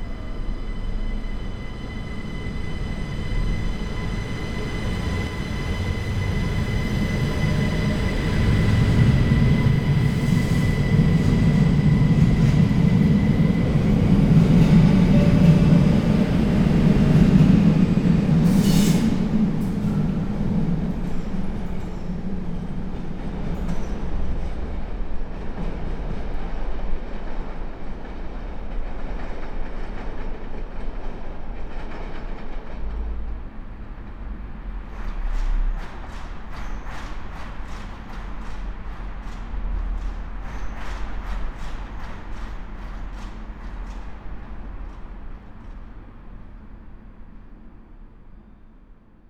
Centre, Ottignies-Louvain-la-Neuve, Belgique - 8h01 train
On the 8h01 train, a very massive arrival of students, climbing stairs. They are called daylight students, counter to the students living in "kots", who are called night-students, as they are living here in LLN (the short name of Louvain-La-Neuve). There's no segregation between us, really not, but they dont have the same life.